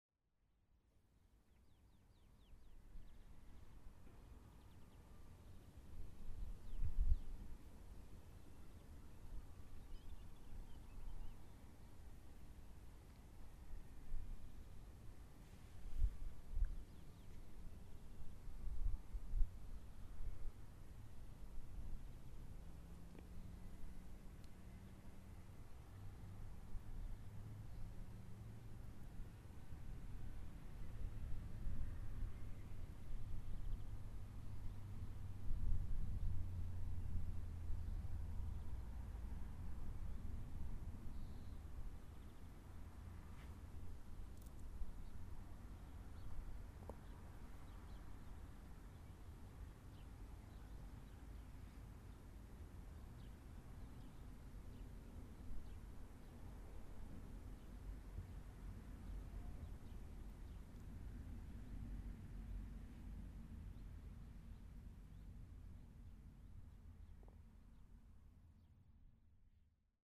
Weimar, Deutschland - nordOstPunkt
SeaM (Studio fuer elektroakustische Musik) klangorte - nordPunkt